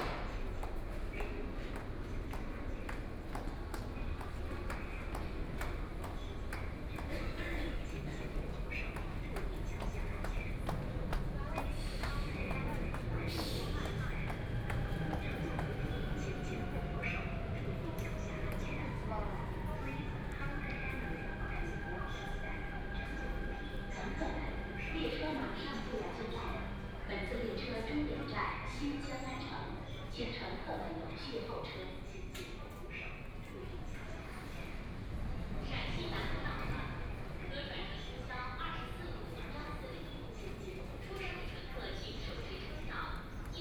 South Shaanxi Road Station, Shanghai - On the platform
On the platform waiting for the train, Voice message broadcasting station, Binaural recording, Zoom H6+ Soundman OKM II
Shanghai, China